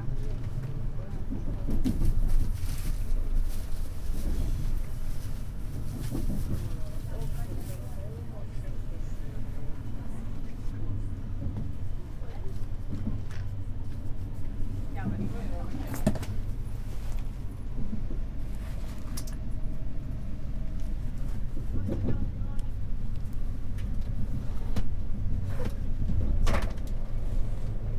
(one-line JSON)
{"title": "Sestri Levante, Metropolitan City of Genoa, Italie - Arrival in train in Sestri Levante", "date": "2016-10-21 21:37:00", "description": "in an compartment of the italian train\ndans le compartiment d'un train italien\nbinaural sound\nson aux binauraux", "latitude": "44.28", "longitude": "9.40", "altitude": "5", "timezone": "Europe/Rome"}